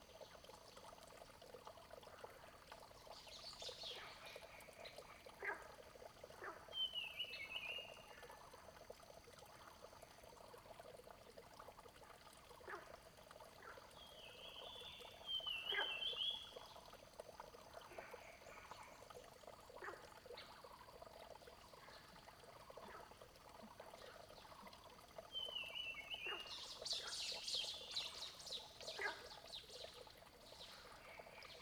三角崙, 魚池鄉五城村, Nantou County - Flow and Frogs sound
Flow, Bird sounds, Frogs chirping, Firefly habitat area, Dogs barking
Zoom H2n MS+XY
Nantou County, Puli Township, 華龍巷164號, 20 April